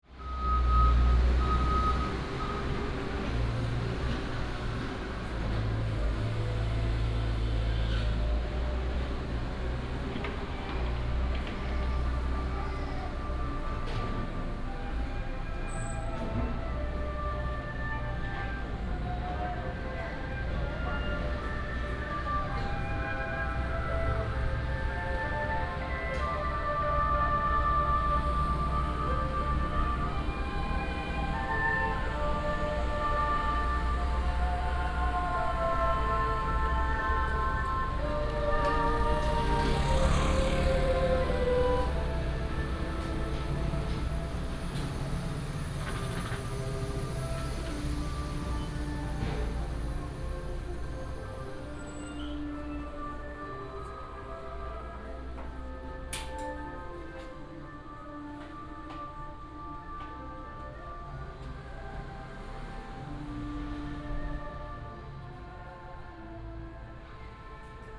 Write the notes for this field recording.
Small town, Traffic sound, Walking in the temple